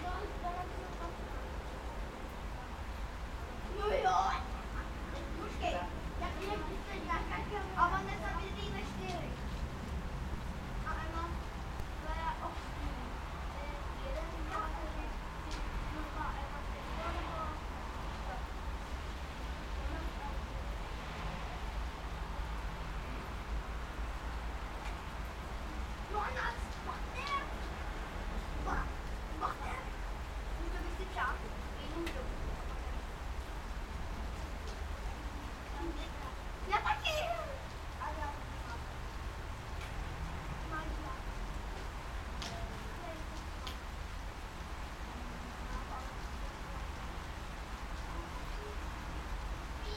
Jáchymovská, Františkov, Liberec, Česko - Cold rainy Firday
Cold rainy Friday on the balcony of an apartment building in Liberec. Childrend play under the balcony.
Severovýchod, Česko, 5 November 2021